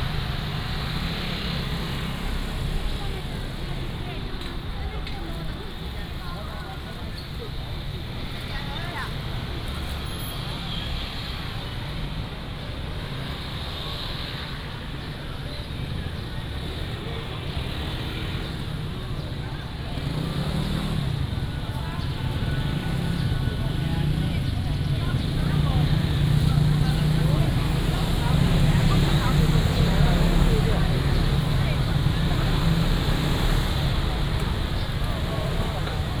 Ln., Zhongzheng Rd., Yuanlin City - Walking on the road
Walk through the market, Traffic sound, Selling voice
Yuanlin City, Changhua County, Taiwan, January 2017